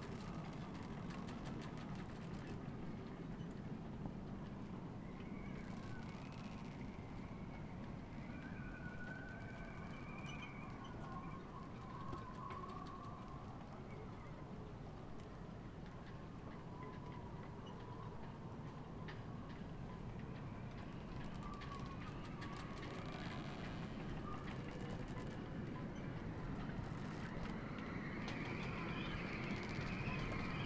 creaking ropes, wind flaps the tarp
the city, the country & me: july 24, 2009